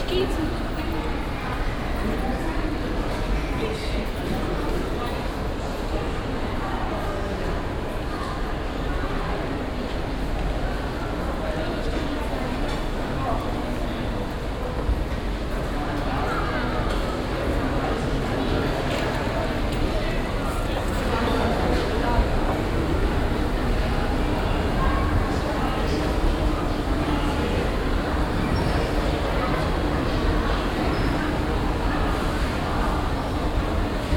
cologne, breite strasse, dumont caree
betriebsames treiben im innenbereich der laden passage, fahrten aud den rolltreppen, modreration einer tierpräsentation
soundmap nrw: social ambiences/ listen to the people - in & outdoor nearfield recordings
2 August 2008, ~14:00